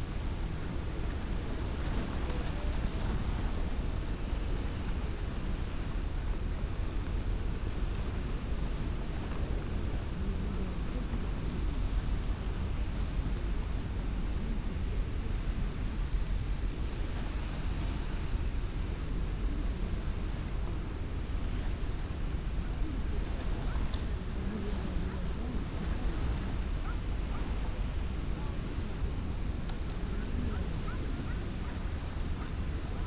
Schillig, Wangerland - wind in grass
wind in grass, near the shore
(Sony PCM D50, DPA4060)
13 September 2014, ~17:00